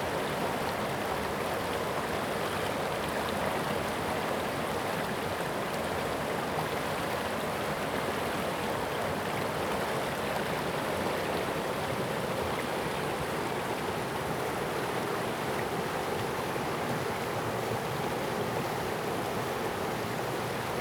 種瓜坑, 成功里, 埔里鎮 - Rivers and stone
Brook, In the river, stream
Zoom H2n MS+XY